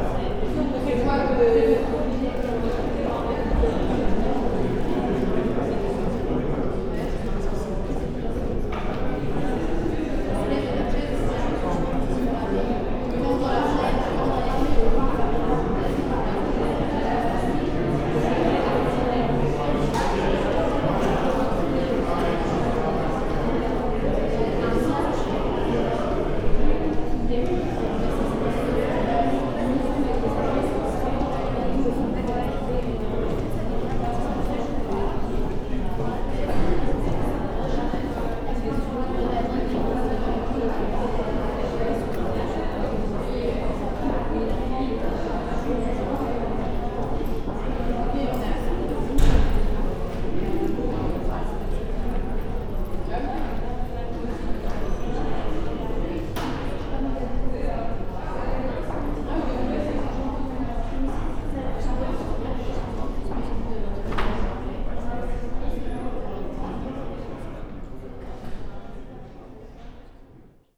Quartier des Bruyères, Ottignies-Louvain-la-Neuve, Belgique - Corridor discussions
In the wide hall of the criminology school, people are discussing.